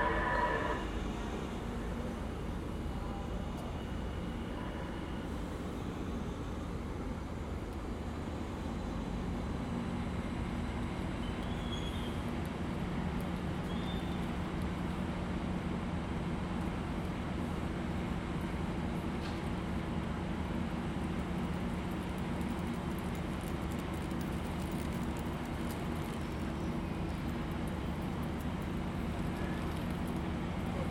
Chinatown, Los Angeles, Kalifornien, USA - LA - union statin, platform 2
LA - union station, platform 2, waiting for the metro gold line train;
CA, USA, January 2014